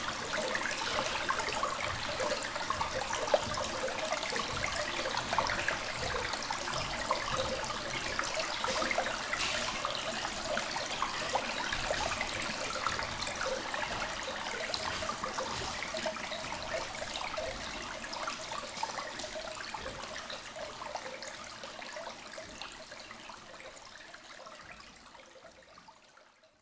Montagnole, France - Small river in the mine
Into a cement underground mine, a small river is flowing. It's going in an hole, what we call in spelunking french word "a loss".
6 June